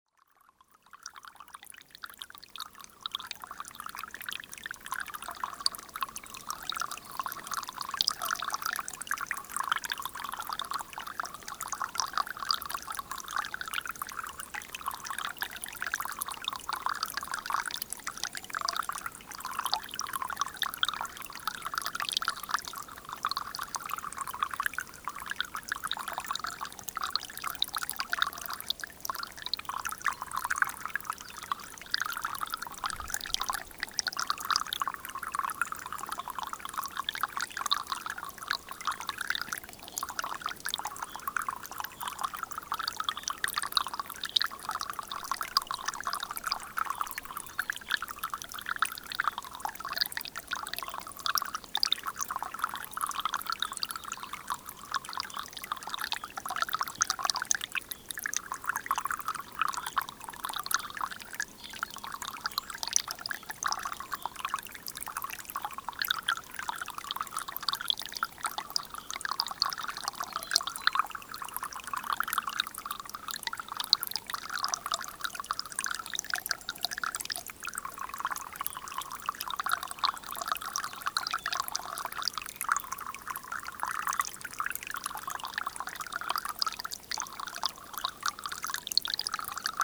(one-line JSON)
{"title": "Pourcharesses, France - Cassini mount", "date": "2016-04-28 06:30:00", "description": "The Lozere Mounts. On this desertic place, a small stream is hurtling.", "latitude": "44.41", "longitude": "3.85", "altitude": "1585", "timezone": "Europe/Paris"}